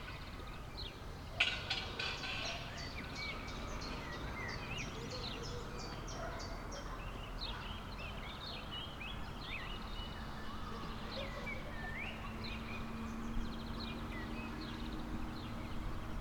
{"title": "Beselich, Niedertiefenbach - quiet village ambience", "date": "2014-04-22 16:30:00", "description": "a well know place from long ago. villages's ambience, afternoon in spring, from slightly above.\n(Sony PCM D50, Primo EM172)", "latitude": "50.44", "longitude": "8.13", "altitude": "203", "timezone": "Europe/Berlin"}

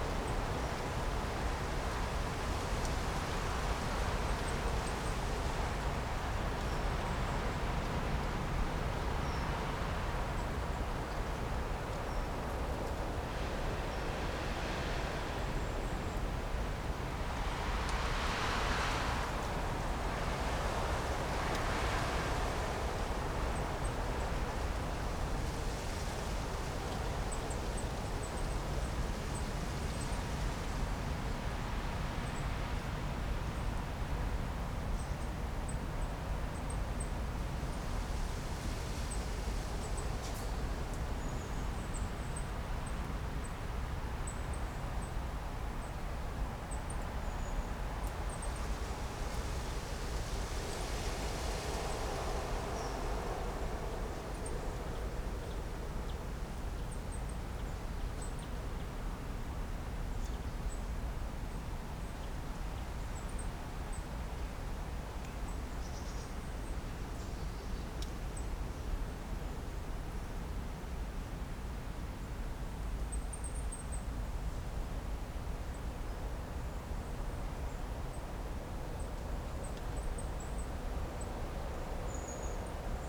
{"title": "Cerje, Miren, Slovenia - Wind", "date": "2020-12-05 10:04:00", "description": "Wind.\nRecorded with Sound Devices MixPre3 II and LOM Uši Pro, AB Stereo Mic Technique, 40cm apart.", "latitude": "45.87", "longitude": "13.60", "altitude": "220", "timezone": "Europe/Ljubljana"}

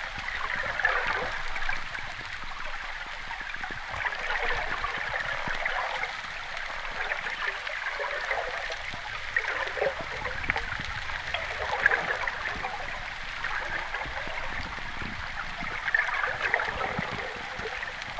{"title": "Ukmergė, Lithuania, river flow", "date": "2021-05-09 16:30:00", "description": "Hydrophone recording of river Sventoji", "latitude": "55.25", "longitude": "24.77", "altitude": "50", "timezone": "Europe/Vilnius"}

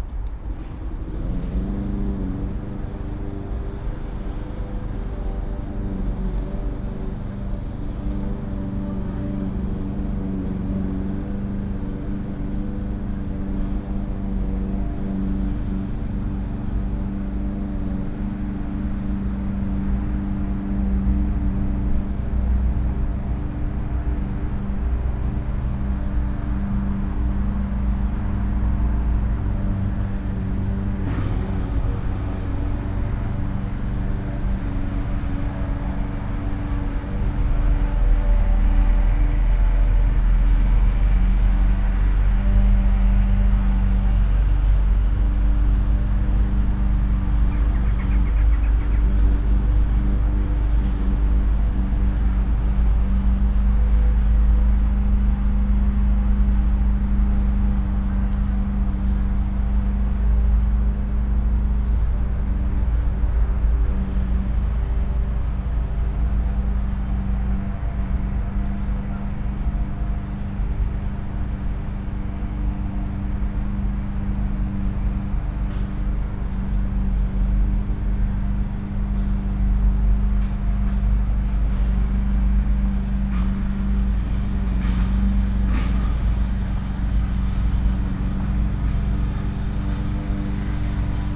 {"title": "Morasko, Planetarna road - mowing crew", "date": "2019-09-19 08:07:00", "description": "a gardening crew starts their work in a big, luxury estate lawn. Man mowing, hitting concrete edges of the drive way, rocks, sticks. a bit of nature on the ride side. (roland r-07)", "latitude": "52.47", "longitude": "16.90", "altitude": "109", "timezone": "Europe/Warsaw"}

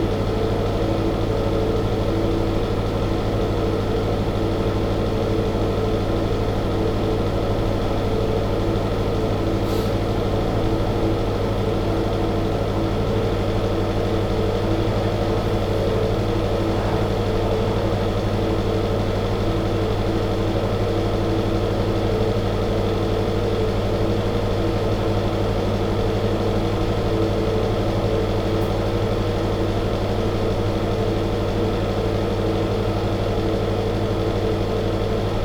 {"title": "Old Town, Klausenburg, Rumänien - Cluj-Napoca - hotel room, ventilation", "date": "2013-11-22 19:40:00", "description": "Inside a hotel room. The sound of the bath room ventilation.\nsoundmap Cluj- topographic field recordings and social ambiences", "latitude": "46.77", "longitude": "23.59", "altitude": "344", "timezone": "Europe/Bucharest"}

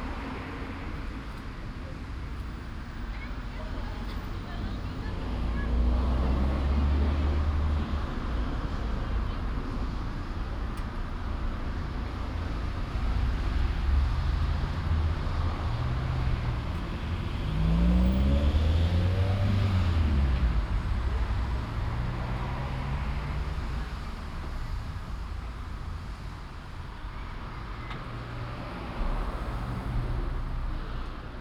{"title": "Kiel, Deutschland - Fast food restaurant patio", "date": "2017-05-07 18:35:00", "description": "Spring, Sunday evening, fast food restaurant patio on a busy street. Traffic noise, birds, a few people in a distance. Binaural recording, Soundman OKM II Klassik microphone with A3-XLR adapter, Zoom F4 recorder.", "latitude": "54.35", "longitude": "10.10", "altitude": "17", "timezone": "Europe/Berlin"}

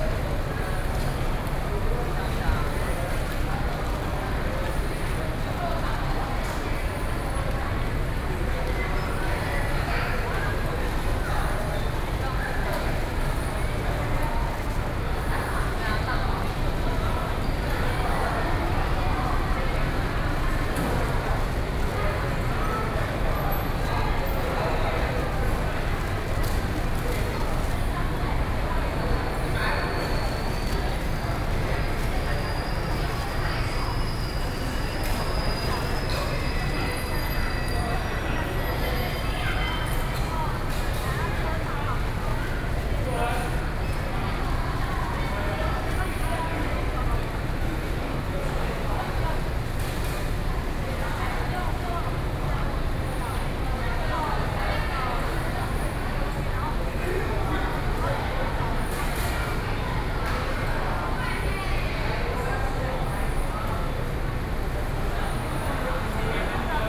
{"title": "Taoyuan Station - Station hall", "date": "2013-08-12 13:31:00", "description": "in the Station hall, Sony PCM D50 + Soundman OKM II", "latitude": "24.99", "longitude": "121.31", "altitude": "102", "timezone": "Asia/Taipei"}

